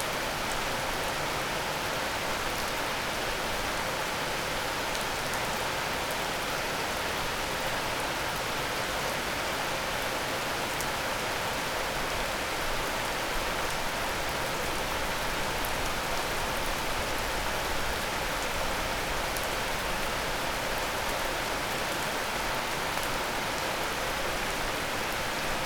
while windows are open, Maribor, Slovenia - sunday rain, may, night
11 May 2014